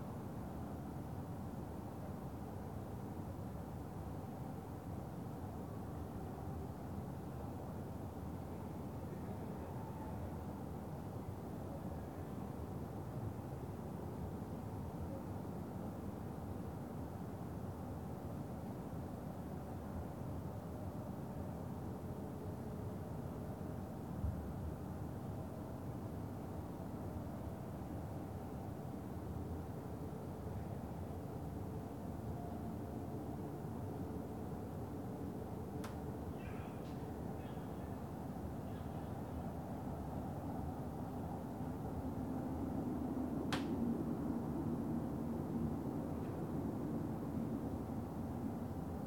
Reuterstrasse: Balcony Recordings of Public Actions - Friday Night Day 07
I didn't know that the two churches across the street do not chime their bells at midnight.
No I do, after recording during the most silent Friday night I have ever witnessed in my neighbourhood (it's been 12 years).
On a Sony PCM D-100